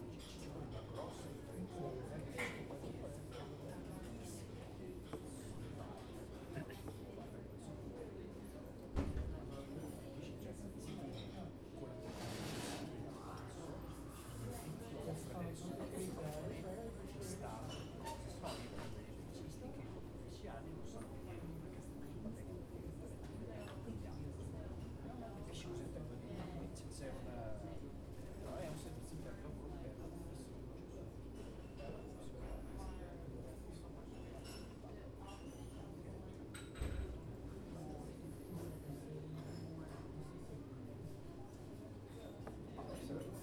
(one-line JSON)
{"title": "Av. Paulista - Bela Vista, São Paulo - SP, 01311-903, Brasil - Cafeteria SESC 10h da manha - SESC Paulista", "date": "2018-09-05 10:00:00", "description": "10h da manha de uma quarta feira nublada, a cafeteria do SESC Paulista encontra-se calma e ocupada por clientes que ali se sentam para tomar seu café da manha. Os talheres, as xícaras, os copos e os pratos nao emitem som pelo ambiente a todo momento. Junto destes, a maquina de expresso e os clientes conversando ecoam pelo estabelecimento no alto de uma das avenidas mais movimentadas da capital paulista.\nGravado com o TASCAM DR-40 sobre a mesa do local, com o proprio microfone interno.", "latitude": "-23.57", "longitude": "-46.65", "altitude": "836", "timezone": "America/Sao_Paulo"}